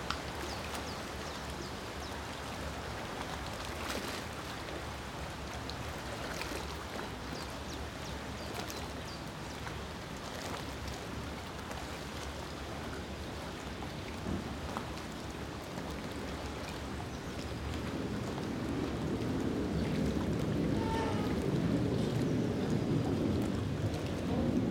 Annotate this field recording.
Eine organische Mischung von verschiedensten Geräuschen, die sich gegenseitig ergänzen. Motorboot, Militärflieger, Schiffshorn, Wasserwellen. Und durch alles fährt ungestört der Raddampfer seine Strecken ab. Juni 2001